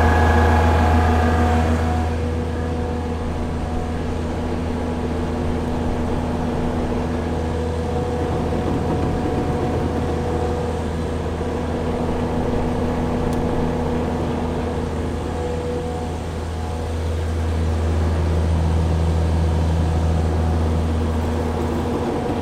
Combine harvester engine
Rec: C414, AB
QC, Canada